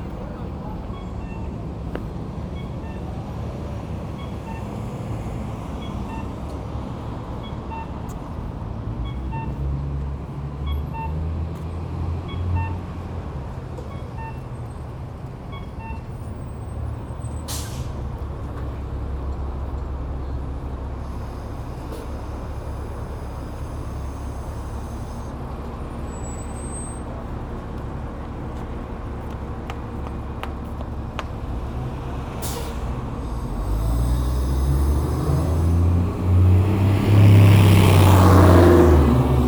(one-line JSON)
{"title": "Downtown Halifax, Halifax, NS, Canada - Traffic light tunes", "date": "2015-10-09 12:02:00", "description": "Halifax traffic lights play tunes when they are green for pedestrians to cross. You hear them at many street corners in the center of town.", "latitude": "44.64", "longitude": "-63.58", "altitude": "41", "timezone": "America/Halifax"}